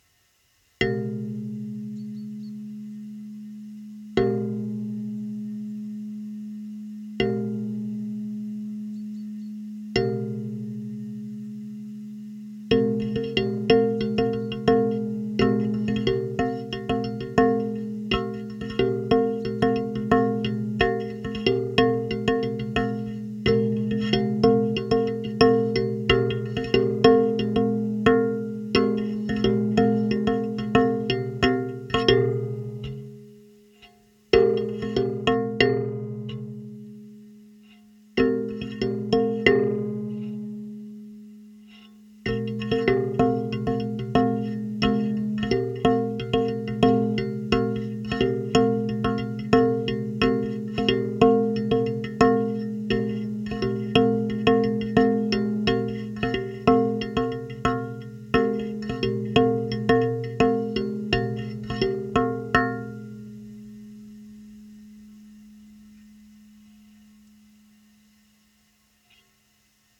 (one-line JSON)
{"title": "Riverside, Kirkby Stephen, UK - Metal thing sticking out of a rack", "date": "2018-04-29 14:57:00", "description": "A bent over steel bar with a curved piece on the end. Played with fingers and recorded with a Barcud Berry contact mic.", "latitude": "54.47", "longitude": "-2.34", "altitude": "171", "timezone": "Europe/London"}